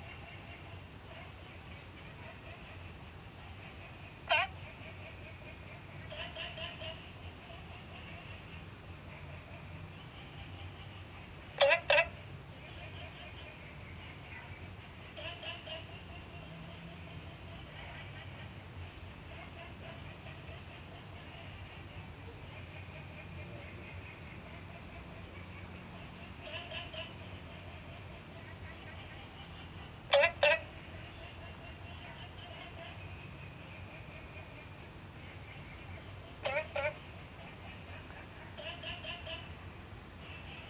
青蛙阿婆ㄟ家, 桃米里, Taiwan - Frog calls
Frog calls, Small ecological pool
Zoom H2n MS+XY